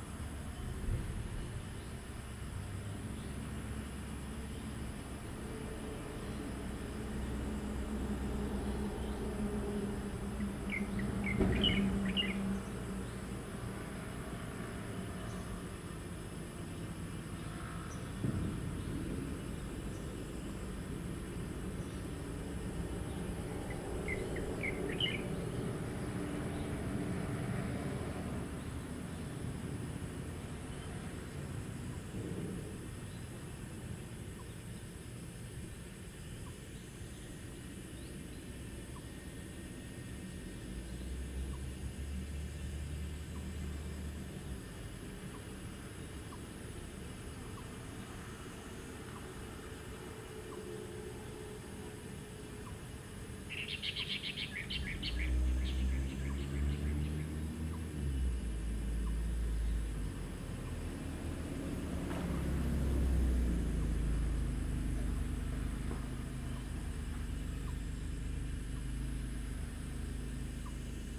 桃米里, 埔里鎮 Puli Township - Ecological pool
Bird calls, Cicadas sound, Traffic Sound
Zoom H2n MS+XY